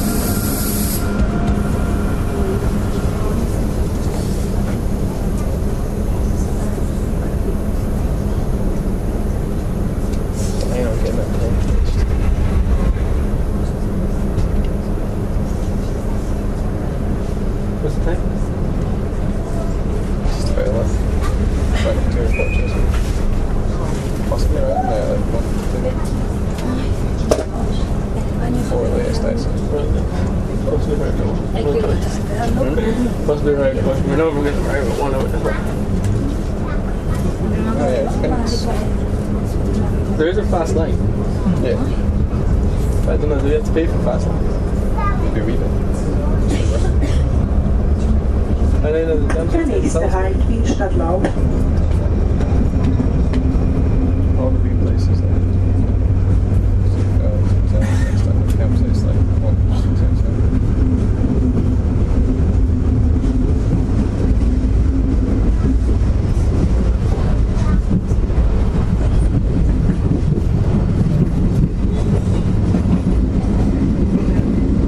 on a train from bratislava to stadlau